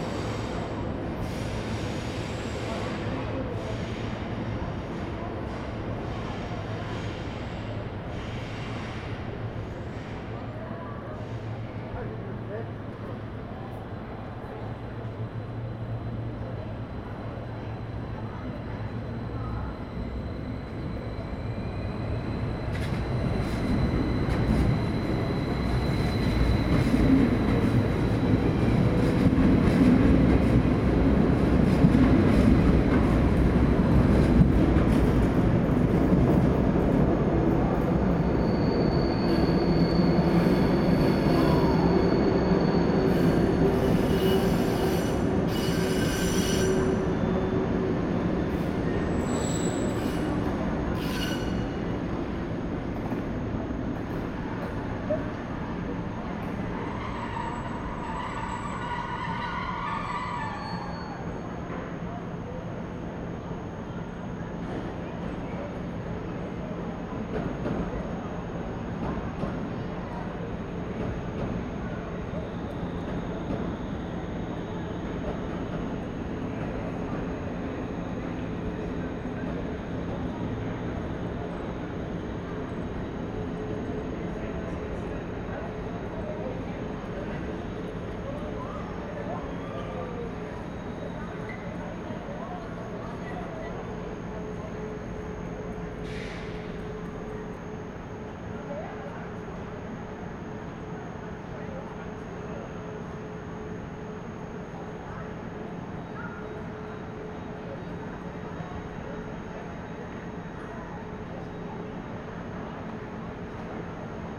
Bahnhofpl., Bern, Schweiz - Bern, Bahnhof, Gleis 6
Waiting for a train on the moderately crowded platform No 6.